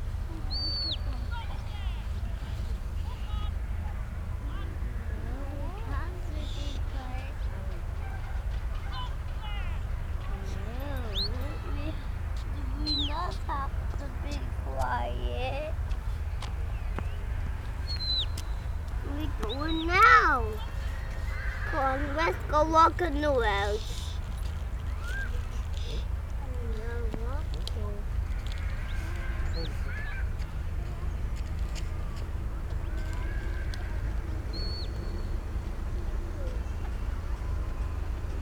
Back Ln, York, UK - Ryedale Show ... sheep dog trials ...
Sheep dog trials ... open lavaliers clipped to sandwich box ... background noises a plenty ... and plenty of comeby and stop there ...
25 July, 11:10